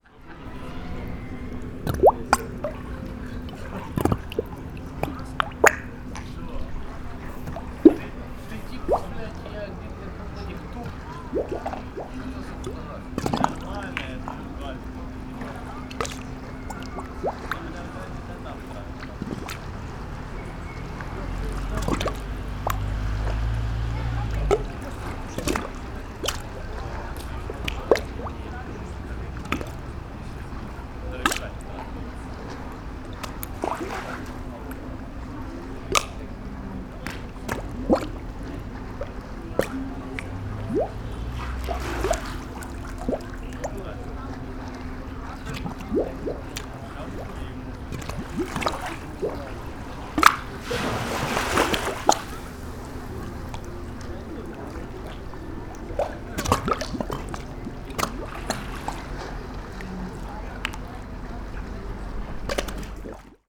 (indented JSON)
{
  "title": "Novigrad, Croatia - round hole",
  "date": "2013-07-20 22:35:00",
  "description": "sounds of sea from near the round hole",
  "latitude": "45.31",
  "longitude": "13.56",
  "timezone": "Europe/Zagreb"
}